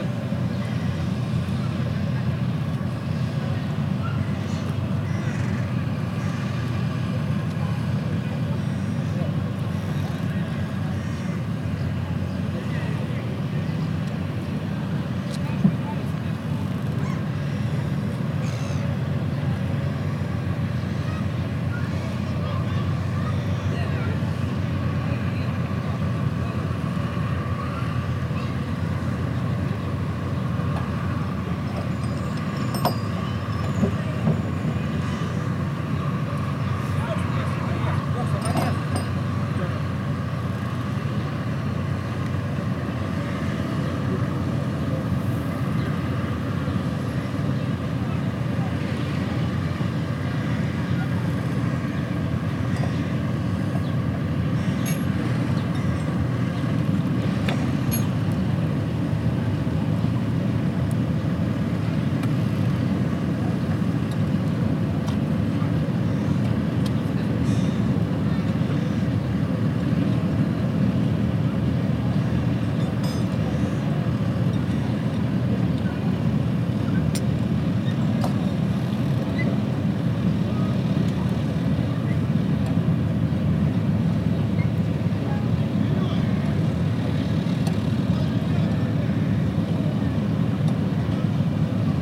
Recordist: Saso Puckovski
Description: Recorded on a clear day. Harbour sounds, people talking and industrial noises. Recorded with ZOOM H2N Handy Recorder.